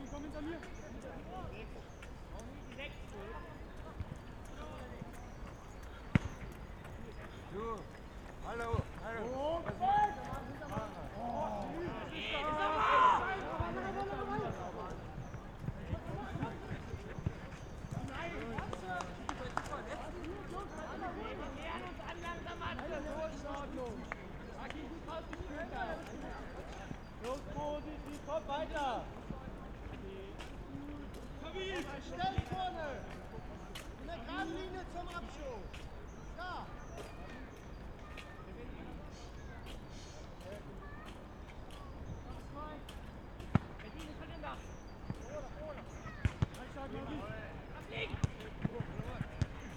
river Wuhle, Hellersdorf, Berlin - Sunday soccer at stadium Wuhletal
sound of a soccer game, Sunday late winter afternoon
(SD702, AT BP4025)